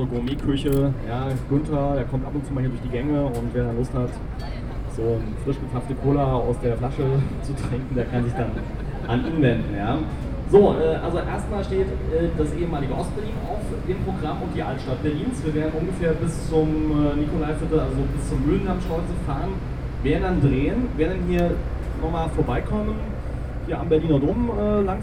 On a Boat near the Berliner Dom
Berlin, Germany, 22 August 2009, 09:57